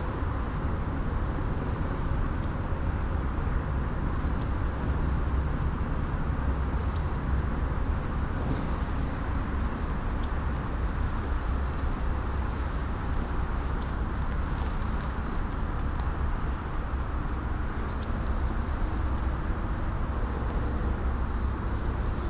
wülfrath, schlupkothen - wuelfrath, schlupkothen
aufnahme auf dem weg um das naturschutzgebiet - hundeauslaufstrecke
project: : resonanzen - neanderland - social ambiences/ listen to the people - in & outdoor nearfield recordings